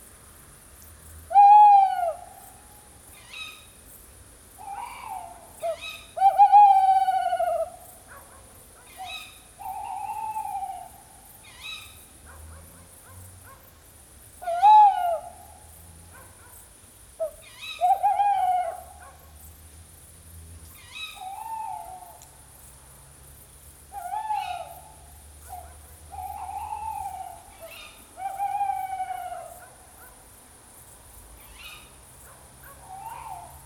Le Haut du Vey, Le Vey, France - Sonic ballet of tawny owls near the falaises.
Quiet night near the falaise of Swiss Normandy.
Jecklin Disc
LOM Usi Pro
tascam DR 100 MK3